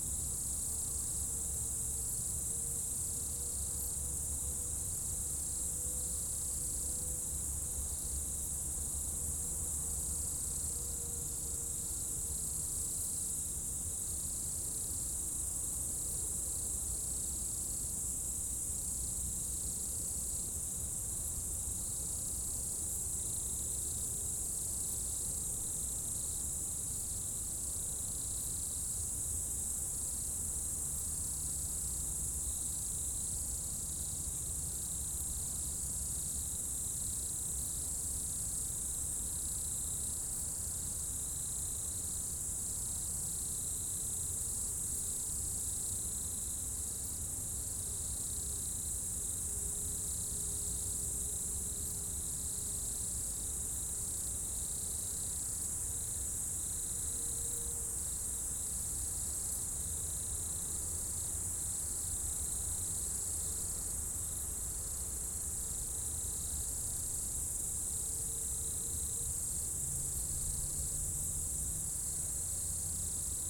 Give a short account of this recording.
Dans la première épingle de la route du col du Chat à Bourdeau, insectes dans le talus et les arbres au crépuscule, avion, quelques véhicules. Enregistreur Tascam DAP1 DAT, extrait d'un CDR gravé en 2006.